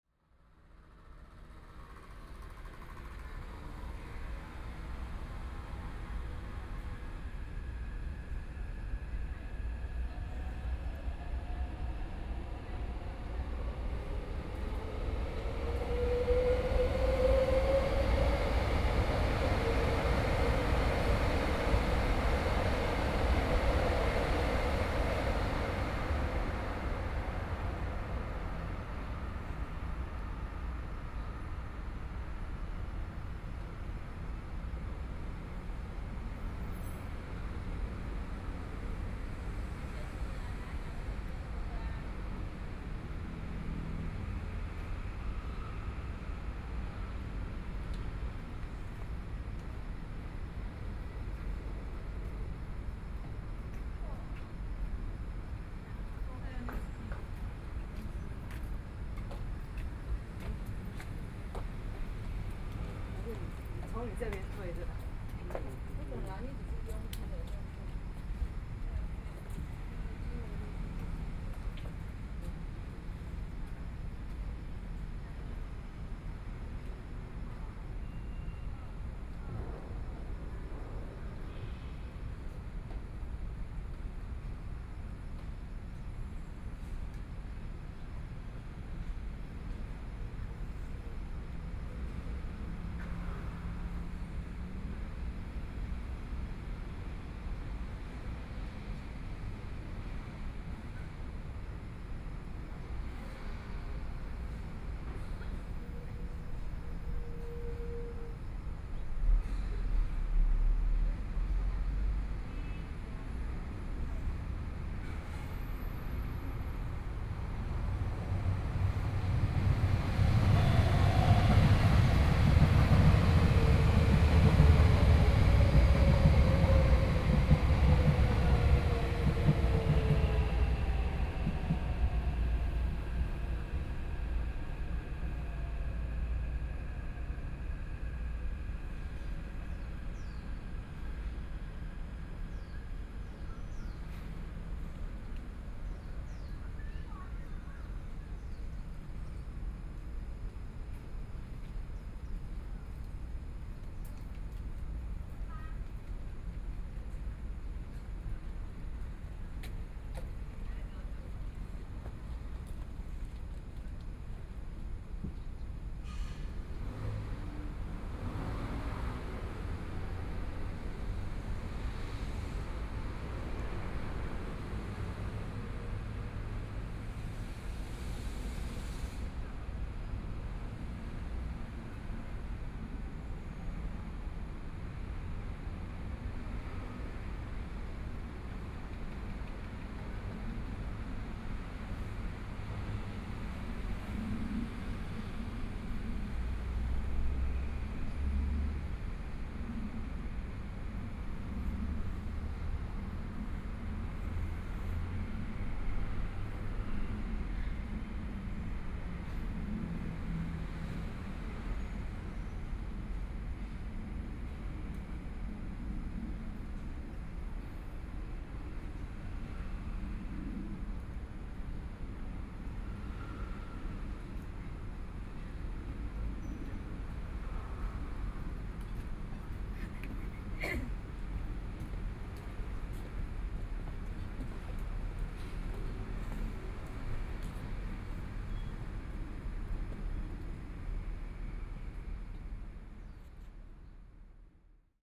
Sec., Zhongyang N. Rd., Beitou Dist. - trains through
MRT trains through, Sony PCM D50 + Soundman OKM II
Taipei City, Taiwan, 8 October 2013